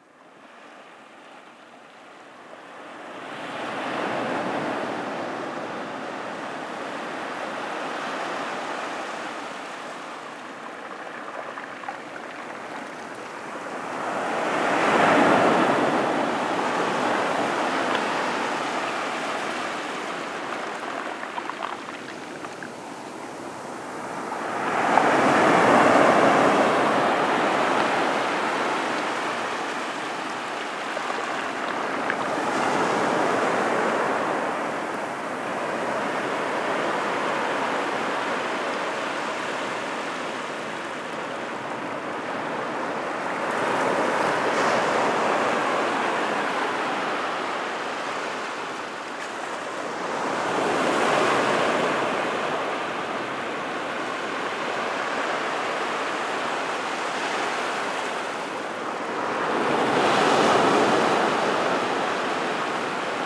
La Faute-sur-Mer, France - The sea
Recording of the sea during high tide, with shells rolling into the waves.
23 May 2018